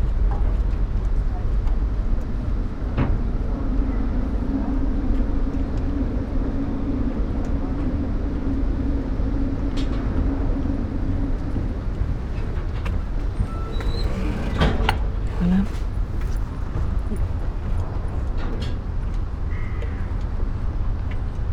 river ship deck, Märkisches Ufer, Berlin, Germany - cafe
Sonopoetic paths Berlin